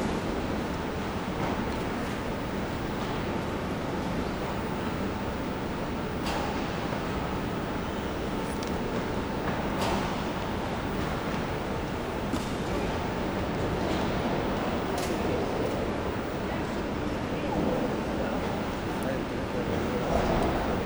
main hall ambience. a line of passengers waiting for their check-in. muttered conversations. a guy cleaning the tops of the check-in stalls, throwing around roof panels.